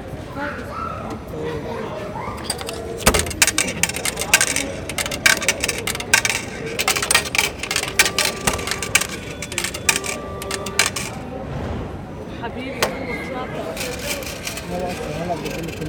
Two girls and a guy at the ticket vending machine on a station platform, trying to figure out what option is the right one for a 1-day ticket ("Tageskarte"). [I used the Hi-MD-recorder Sony MZ-NH900 with external microphone Beyerdynamic MCE 82]